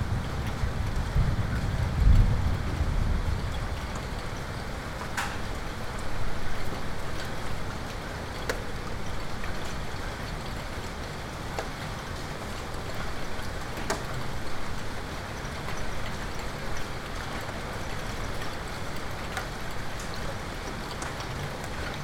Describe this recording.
Recording of a heavy storm with hailstone and thunders. AB stereo recording (17cm) made with Sennheiser MKH 8020 on Sound Devices Mix-Pre6 II.